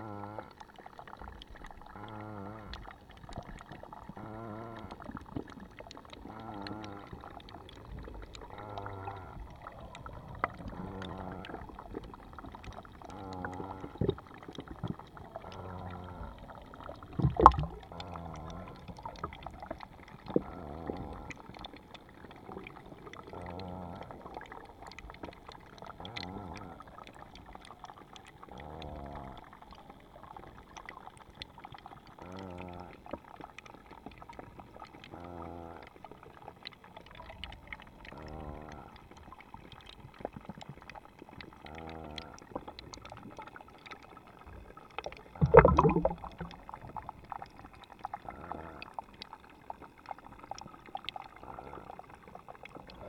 Povojné, Horný Tisovník, Slovensko - Minerálny prameň
Underwater recording of "Horny Tisovnik" mineral spring.